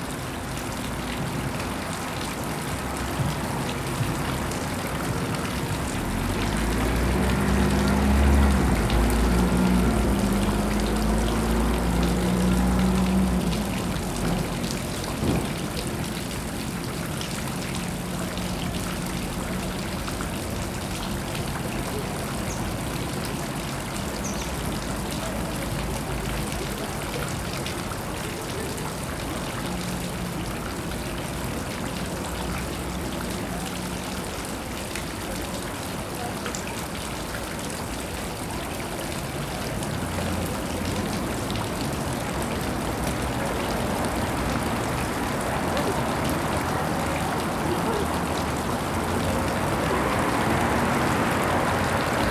00061 - BONREPOS, 31500 Toulouse, France - boat lock
water, boat lock, road traffic, person speaking
pedestrian crossing, skateborder
Capatation ZOOMh4n
1 April 2022, 09:12